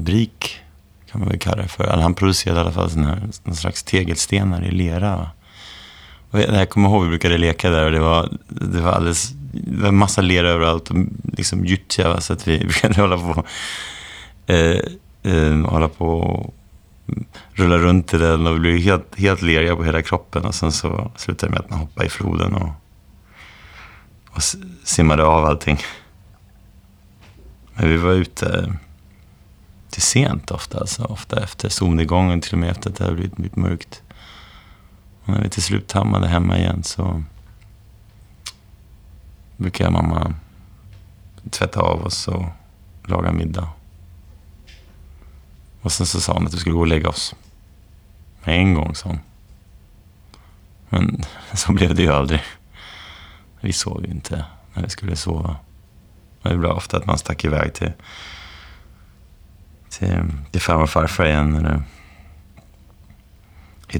Topology of Homecoming
Imagine walking down a street you grew
up on. Describe every detail you see along
the way. Just simply visualise it in your mind.
At first your walks will last only a few minutes.
Then after a week or more you will remember
more details and your walks will become longer.
Five field recordings part of a new work and memory exercise by artist Stine Marie Jacobsen 2019.
Stine Marie Jacobsen visited the Swedish city Tranås in spring 2019 and spoke to adult students from the local Swedish language school about their difficulties in learning to read and write for the first time through a foreign language. Their conversations lead her to invite the students to test an exercise which connects the limited short term memory with long term memory, which can store unlimited amounts of information.
By creating a stronger path between short and long term memory, perhaps more and new knowledge will symbolically and dynamically merge with one’s childhood street and culture.
Götaland, Sverige, 12 July